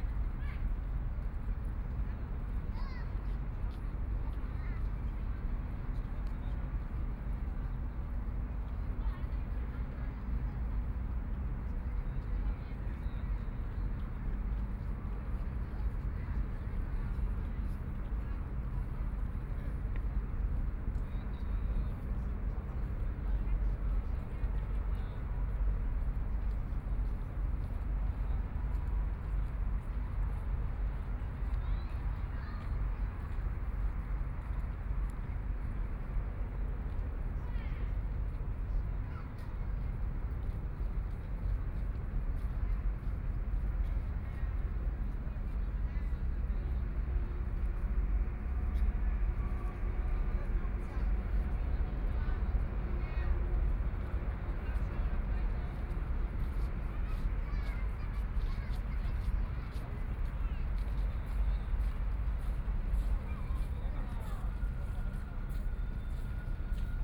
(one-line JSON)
{"title": "the Bund, Shanghai - environmental sounds", "date": "2013-12-02 12:03:00", "description": "sound of the Boat traveling through, Many tourists, In the back of the clock tower chimes, Binaural recordings, Zoom H6+ Soundman OKM II", "latitude": "31.24", "longitude": "121.49", "timezone": "Asia/Shanghai"}